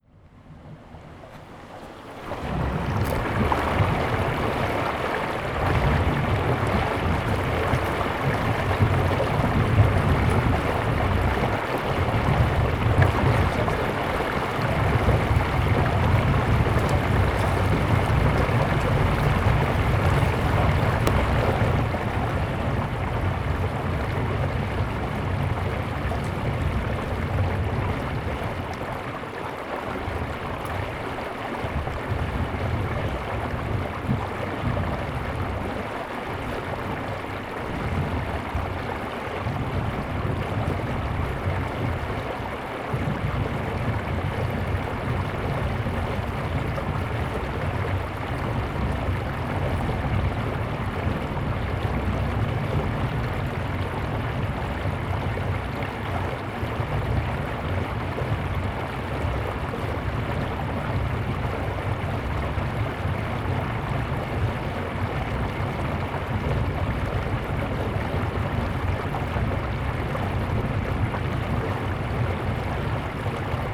The old church of Geamana village is partially submerged by polluted water tainted with different chemicals from the copper mine near Rosia Montana, central Romania. The lake is changing colour according the technology used up in the mine.